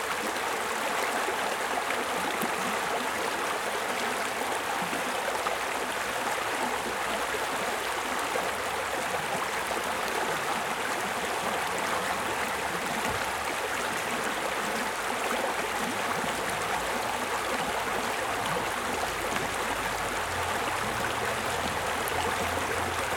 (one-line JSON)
{"title": "Holmfirth, Holmfirth, West Yorkshire, UK - WLD 2015 River Holme at night", "date": "2015-07-18 23:56:00", "description": "Sitting next to the river for a few minutes to listen to the stream and watch the bats.", "latitude": "53.57", "longitude": "-1.78", "altitude": "147", "timezone": "Europe/London"}